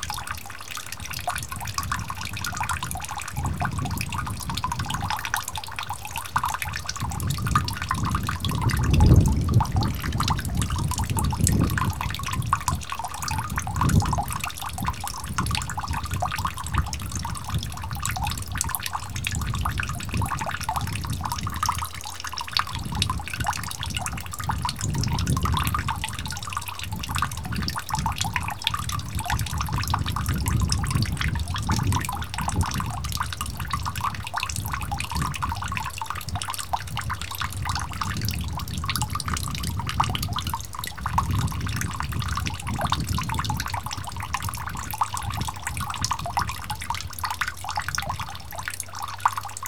Sasino, near Chelst brook - water tank trickle
water running down from a steel barrel into a water tank.